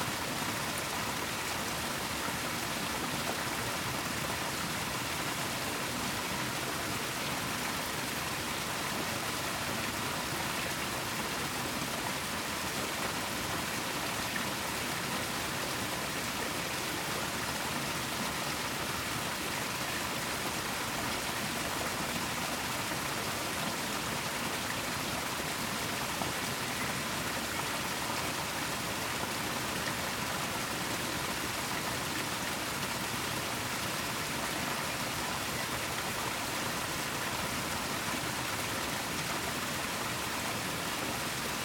Užpaliai, Lithuania, old watermill
the waterflow under the old watermill